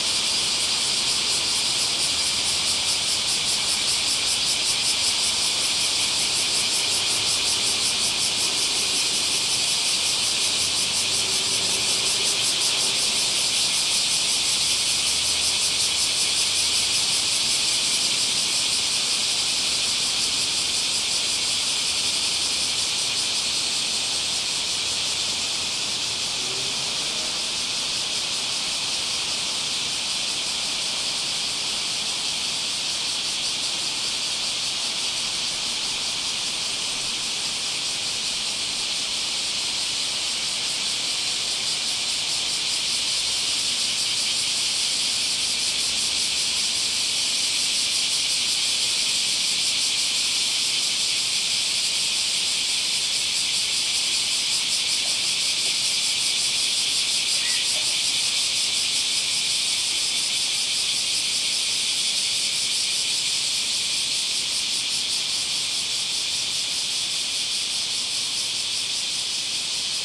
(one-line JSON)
{"title": "Kameyacho (Kojinguchidori), Kamigyo Ward, Kyoto, Kyoto Prefecture, Japan - Cicadas at Kamogawa Park", "date": "2013-08-15 10:02:00", "description": "Cicadas at Kamogawa Park (鴨川公園) in Kyoto, Japan, on a hot August morning. Traffic noise was less than normal, but still pretty loud. Recorded with an Olympus LS-10 recorder (built-in mics).", "latitude": "35.02", "longitude": "135.77", "altitude": "50", "timezone": "Asia/Tokyo"}